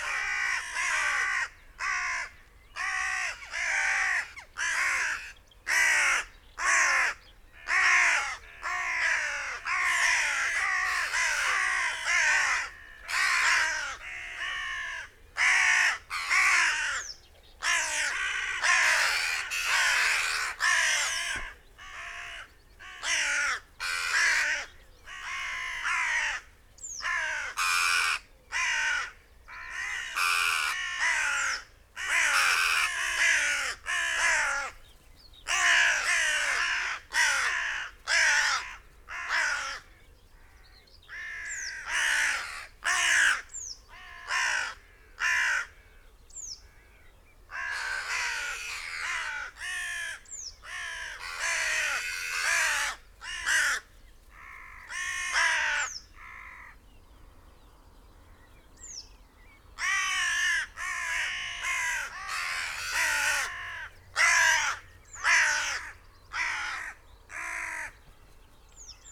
Green Ln, Malton, UK - crows ... cawing ... rasping ... croaking ...
crows ... cawing ... rasping ... croaking ... lavaler mics clipped to trees ... loose flock of crows flapped ... glided ... landed ... close to the mics ... bird calls ... song ... yellow wagtail ... whitethroat ...